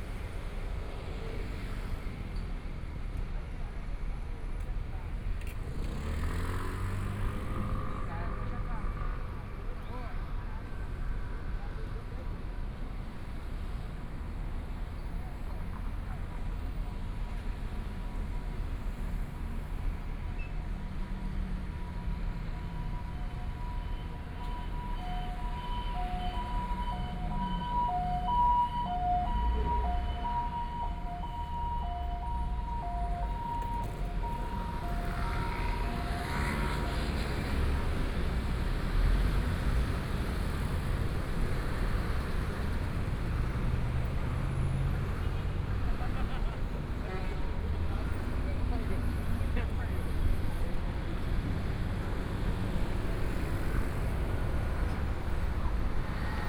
Songjiang Rd., Zhongshan Dist. - soundwalk
from Minquan E. Rd. to Minsheng E. Rd., Traffic Sound, Various shops voices, Construction site sounds, Binaural recordings, Zoom H4n + Soundman OKM II
20 January 2014, 16:05